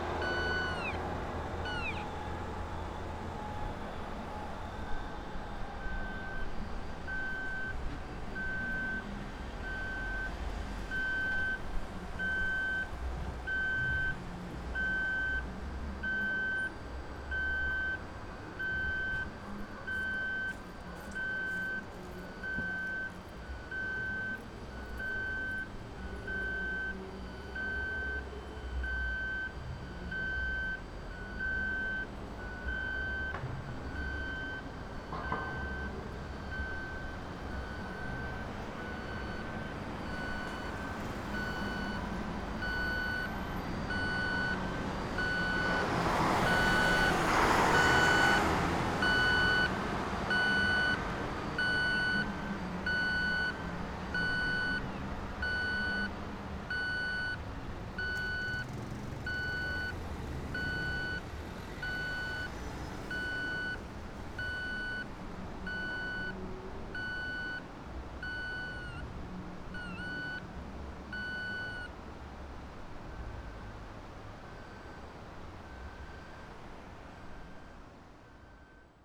Funchal, Rua Do Visconde De Anadia - crosswalk lights
walking around the intersection and chasing intertwining streetlight sound signals.